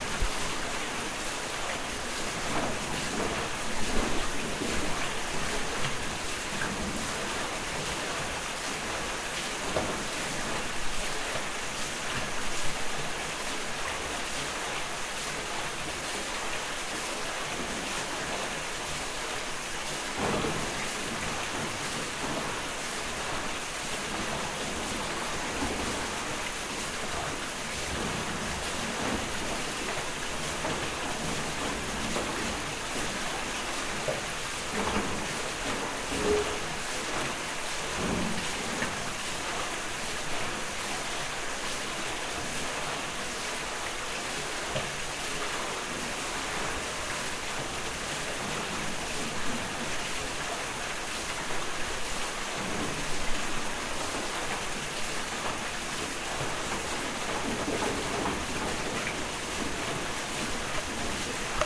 Worsborough Water Mill
Sound of the water wheel and wooden mill wheels.
Barnsley, UK, 17 August 2010